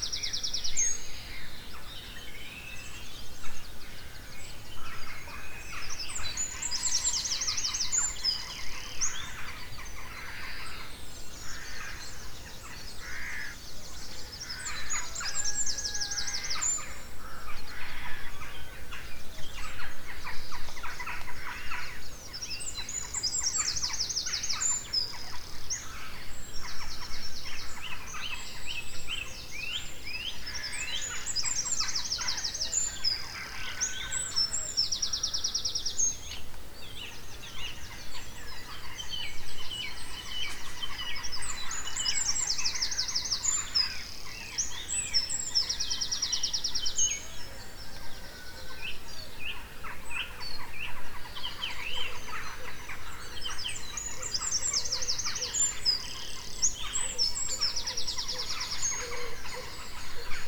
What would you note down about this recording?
Early morning. 100 yards from the copse, facing west. Lots of birds, and lambs / poultry a long way off at Graston farm. My back was to the tent and you can hear my boy shifting on his air bed every now and then. Recorded on a Tascam DR-40 with the built in mics set to wide.